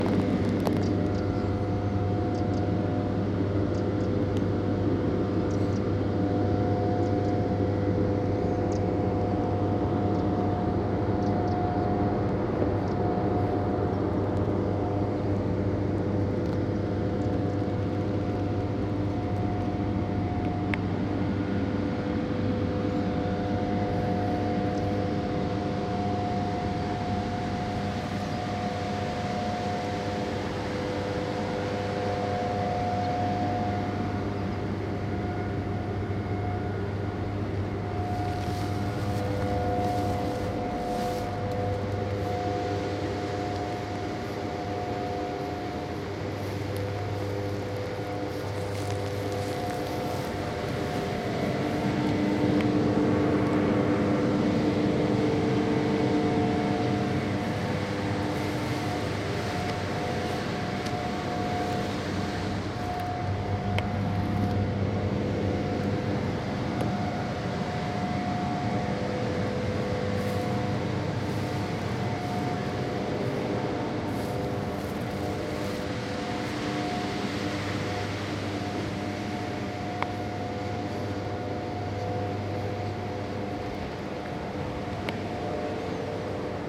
Sizewell Nuclear Power Station, Suffolk, UK - Sizewell Drones
Drones from Nuclear Power Station.
Telinga Parabolic stereo microphone. Dat recorder.